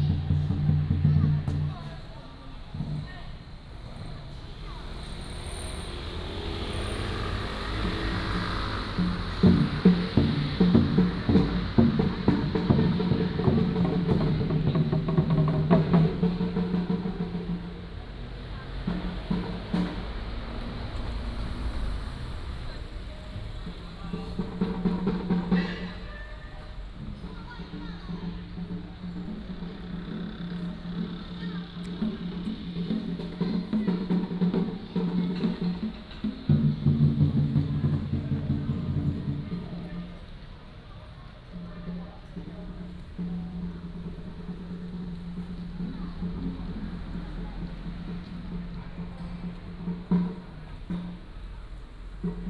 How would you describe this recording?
A group of students in front of the temple square